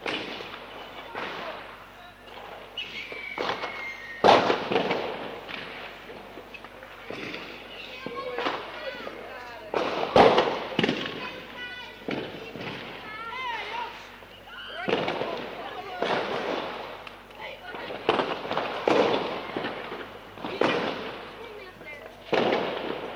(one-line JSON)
{"title": "Haaksbergen, Nederland - New Years Eve 1988-1989", "date": "1989-01-01 00:05:00", "description": "I was going through a box of old cassettes when I found this low fidelity recording I made on new years eve 1988 from my bedroom window when still living at my parents place.\nI don't know the recording specs anymore. It was a consumer cassette player with two completely different mics.", "latitude": "52.16", "longitude": "6.73", "timezone": "Europe/Amsterdam"}